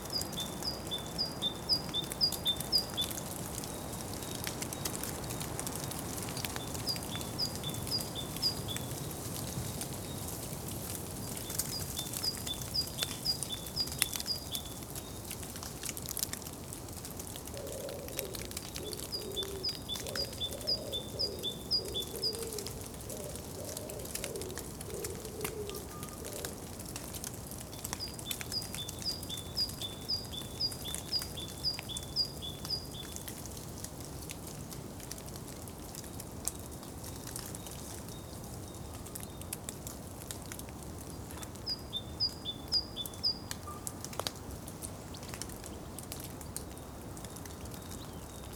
The area here at the ''Stachel'' forest reserve can only be reached on foot. The hike leads across extensive meadows to the edge area between open country and the forest area where this recording was made. This field recording was recorded with a tree ear microphone setup. This extraordinary living space has always fascinated me. Now I am trying to make these unique moments audible for now and for the future of this very special place here in this protected area.
Bayern, Deutschland, 12 April 2022